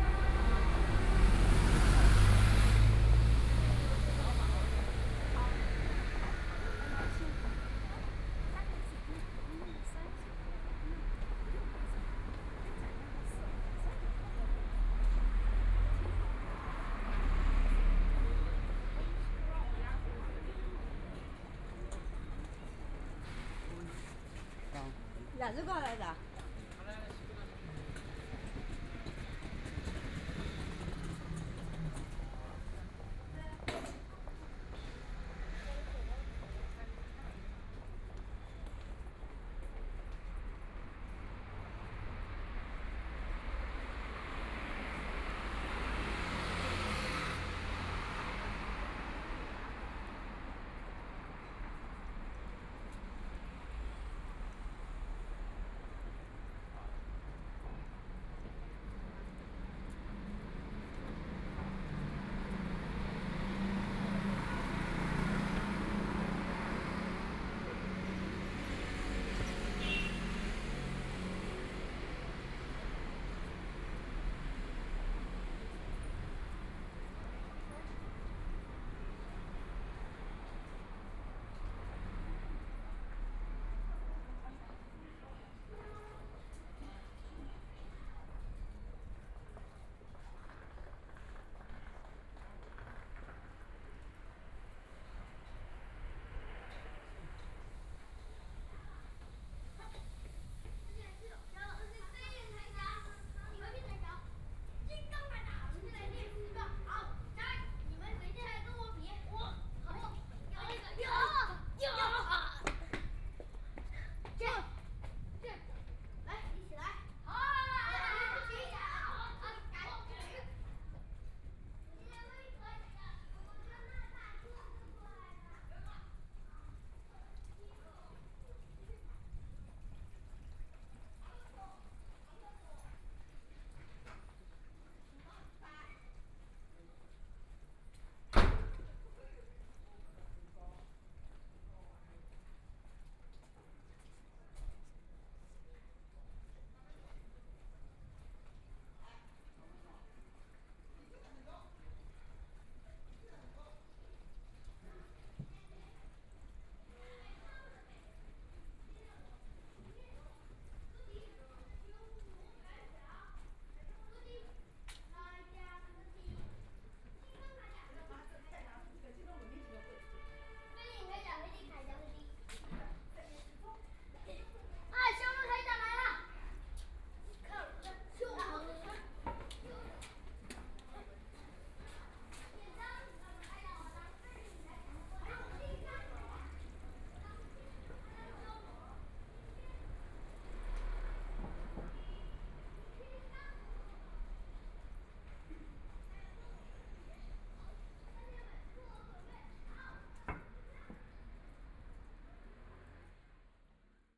Madang Road, Shanghai - soundwalk
Walking in the noisy street, Then enter the old community inside, Pedestrians, Traffic Sound, Binaural recording, Zoom H6+ Soundman OKM II ( SoundMap20131126- 33)
Shanghai, China, 26 November 2013